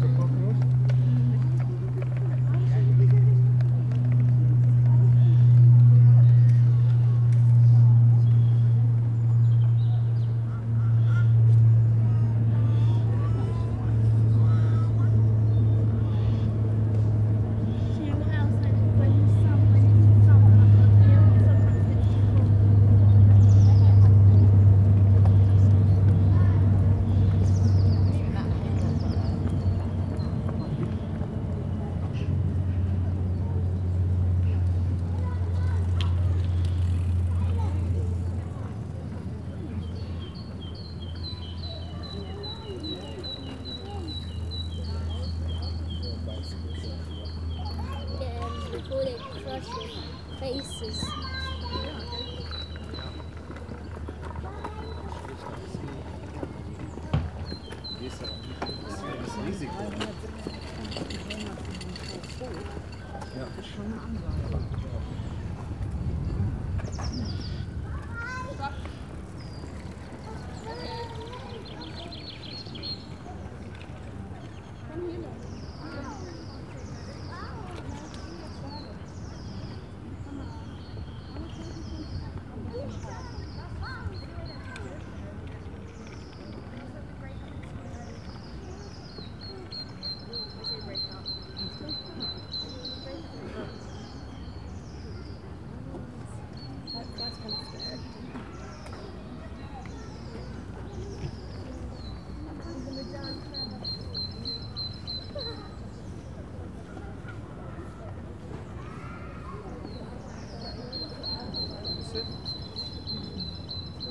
Kingston upon Thames, Canbury Gardens - Canbury Gardens
Sony PCM D100. Canbury gardens at noon. Lot of people walking, trains passing, plains and dogs - usual elements of London soundscape. Tiny amount of EQ added to cut the wind noise.
Kingston upon Thames, UK